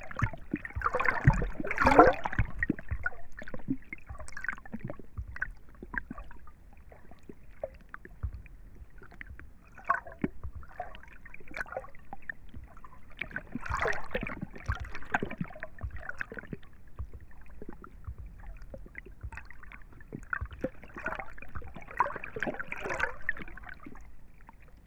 Vltava river ripples amongst stones, Prague, Czechia - Vltava river ripples heard slowly crossfading from above to below water
With normal ears it is rather difficult to hear the river Vltava at Braník as traffic noise from the autobahn on the opposite bank continuously drowns out most smaller sounds including water ripples, rowing boats and kayaks. However at some spots mini waves breaking on stones at the river's edge are audible. This track was recorded simultaneously above (normal mics) and below water level (a hydrophone). At the start ripples and traffic noise are heard, which slowly crossfades into the gloopy, slopy underwater world, where the traffic is no longer audible.
Praha, Česko, 7 April 2022